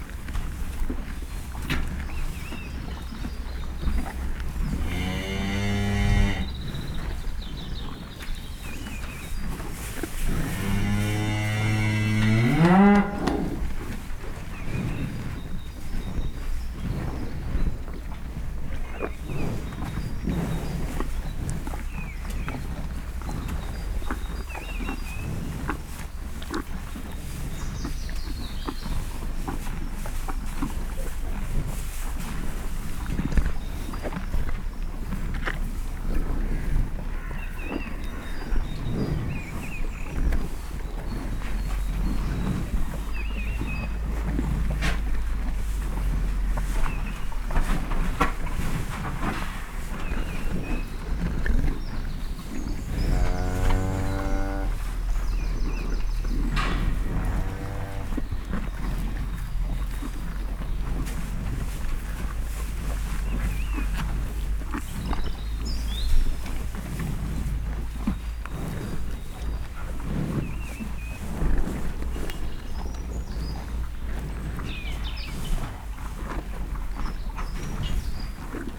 Cowshed, Bredenbury, Herefordshire, UK - Hereford Cattle Eating
About a dozen Hereford cattle continuously munch on hay bales. They are in an open sided cattle shed and I have placed the mics on top of the bales. While I stand about a yard away they stare at me fixedly all the time they are ating.
England, United Kingdom, 31 March 2018